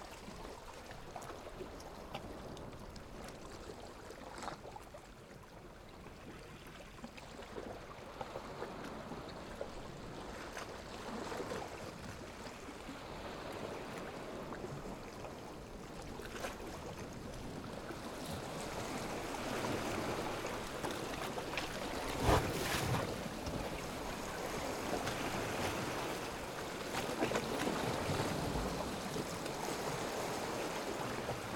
Otranto LE, Italia - quiet water
Really close recording of the sea from the rocks. SETUP was: Rode NT5 stereopair in 180° configuration (really different sounding L-R) - Rode Blimp as wind protection on tripod - Sound Devices 302 - Fostex FR2LE.
Thanks for listening to Nature =)
GiGi d-.-b